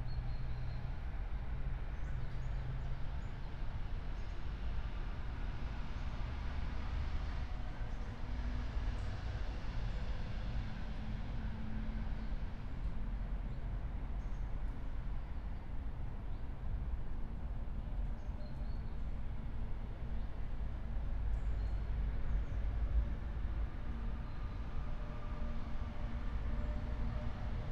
river Traun railway bridge, Linz - under bridge ambience
07:23 river Traun railway bridge, Linz